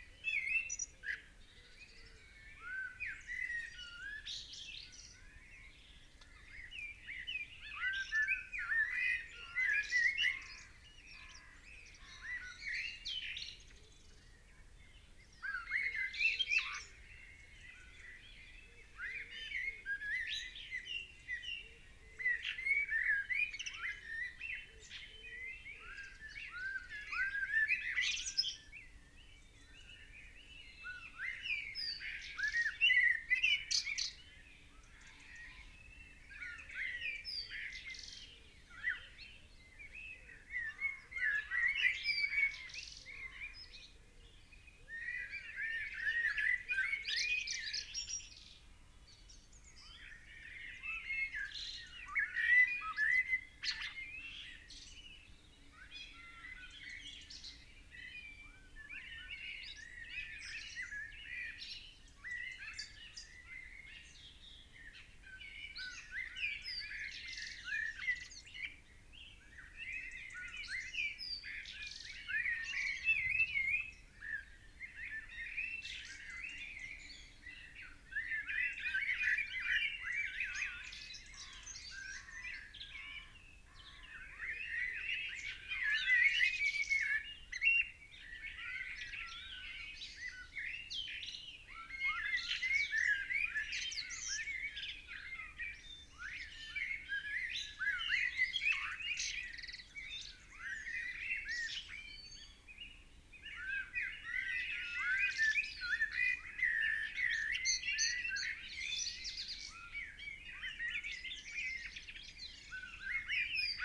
Chapel Fields, Helperthorpe, Malton, UK - early morning blackbirds ...
Early morning blackbirds ... binaural dummy head on the garden waste bin ... calls ... song ... from robin ... carrion crow ... pheasant ... background noise ...
6 April 2018, 05:28